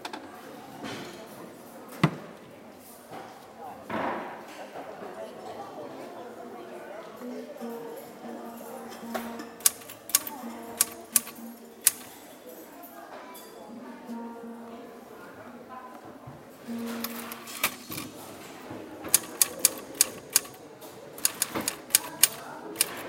hjärta to hjärta, huge 2nd hand boutique
Linköping, Sweden